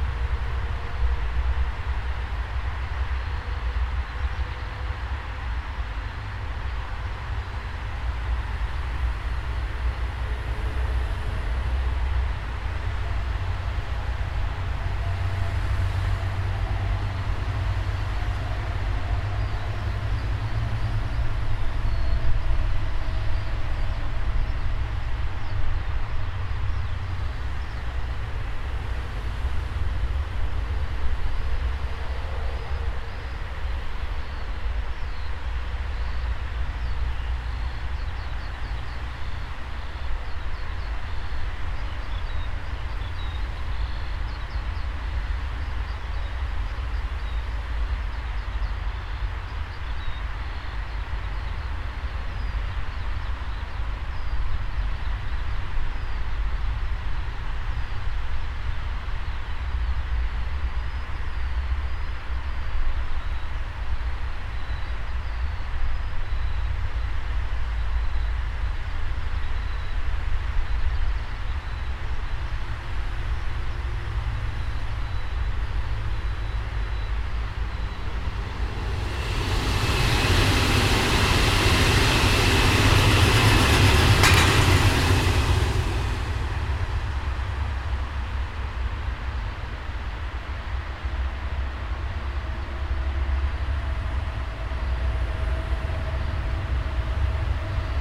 {
  "title": "Tempelhof, Berlin, Germany - Tempelhofer Feld - An der Ringbahn",
  "date": "2017-05-29 07:45:00",
  "description": "It's almost summer, early in the morning, the larks are very audible, also the traffic from the highway. Commuter trains passing by occasionally.",
  "latitude": "52.47",
  "longitude": "13.39",
  "altitude": "44",
  "timezone": "Europe/Berlin"
}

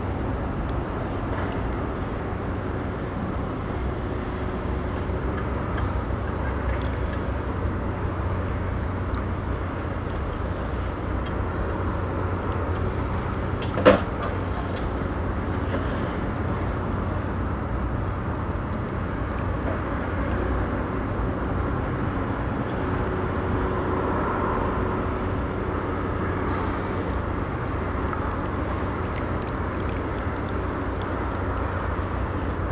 {
  "title": "Maribor, Slovenia - one square meter: urban noise along the riverbank",
  "date": "2012-08-27 11:59:00",
  "description": "construction noise and the rumble of traffic on a nearby bridge, along with the lapping riverwater, crickets, and an occasional swan, recorded from the ruins of a staircase down to the water from what is now a parking lot.. all recordings on this spot were made within a few square meters' radius.",
  "latitude": "46.56",
  "longitude": "15.65",
  "altitude": "263",
  "timezone": "Europe/Ljubljana"
}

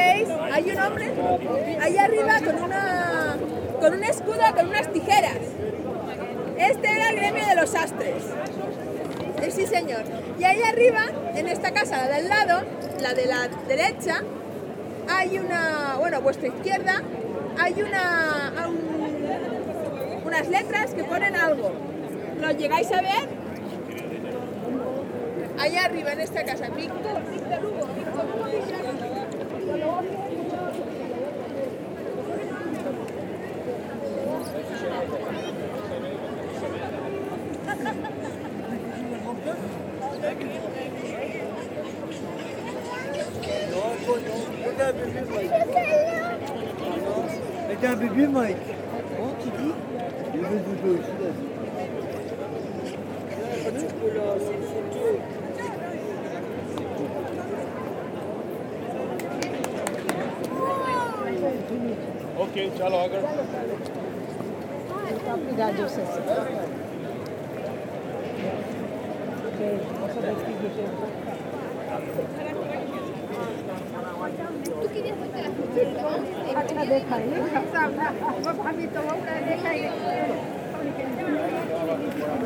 {
  "title": "Bruxelles, Belgium - Brussels Grand Place",
  "date": "2018-08-25 12:30:00",
  "description": "The Brussels Grand-Place (french) Grote Markt (dutch). It's the central place of Brussels, completely covered with cobblestones. Very beautiful gothic houses and the main town hall. During this period, very much Spanish and Chinese tourists. An old woman, beggar. Photos, discussions, wind, touristic ambiance.",
  "latitude": "50.85",
  "longitude": "4.35",
  "altitude": "20",
  "timezone": "GMT+1"
}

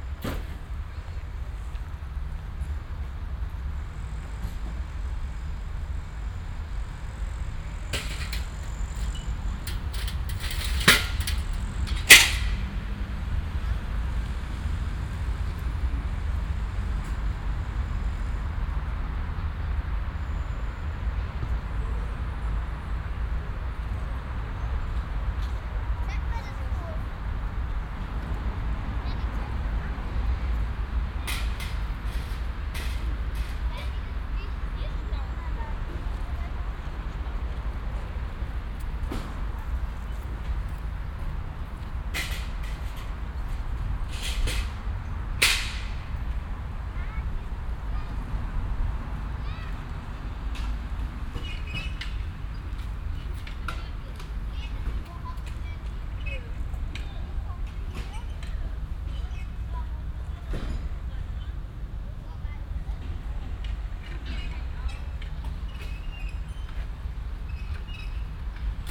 {
  "title": "Düsseldorf, Hofgarten, Spielplatz - düsseldorf, hofgarten, spielplatz",
  "date": "2008-08-20 09:39:00",
  "description": "Spielplatz im Hofgarten, am Nachmittag. im Vordergrund Leerung der Abfallbehälter durch mitarbeiter des grünflächenamtes, im Hintergrund Arbeitsgeräusche von Bauarbeiten\nsoundmap nrw: topographic field recordings & social ambiences",
  "latitude": "51.23",
  "longitude": "6.78",
  "altitude": "48",
  "timezone": "Europe/Berlin"
}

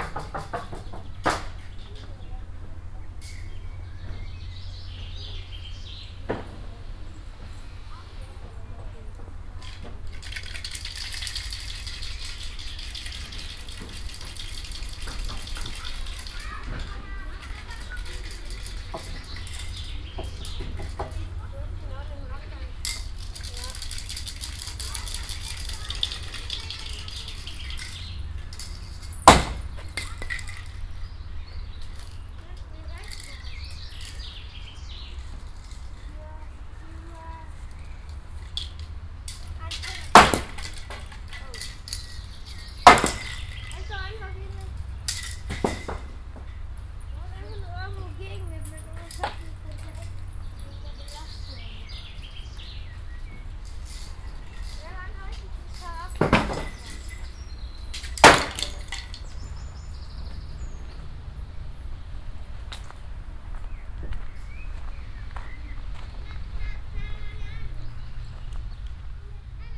{
  "title": "cologne, friedenspark, construction playground",
  "date": "2009-07-06 01:58:00",
  "description": "soundmap nrw: social ambiences/ listen to the people in & outdoor topographic field recordings",
  "latitude": "50.92",
  "longitude": "6.97",
  "altitude": "50",
  "timezone": "Europe/Berlin"
}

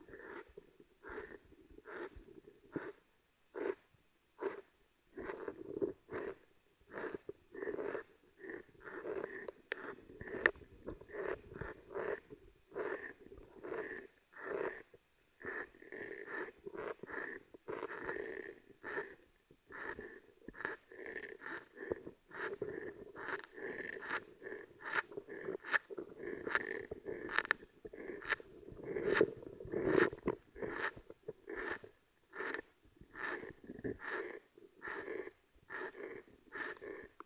{
  "title": "Utena, Lithuania, swamp underwater",
  "date": "2013-09-01 16:30:00",
  "description": "hydrophone recording of autumnal swamp",
  "latitude": "55.50",
  "longitude": "25.57",
  "altitude": "106",
  "timezone": "Europe/Vilnius"
}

